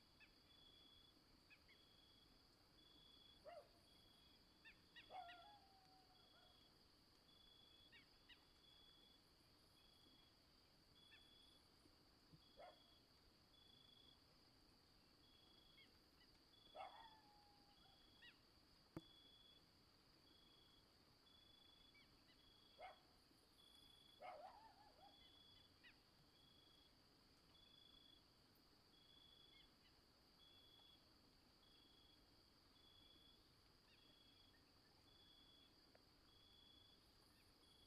Santuario, Antioquia, Colombia - Natural soundscape Santuario
Field recording captured on the rural areas of Santuario, Antioquia, Colombia.
10:00 pm night, clear sky
Zoom H2n inner microphones in XY mode.
Recorder at ground level.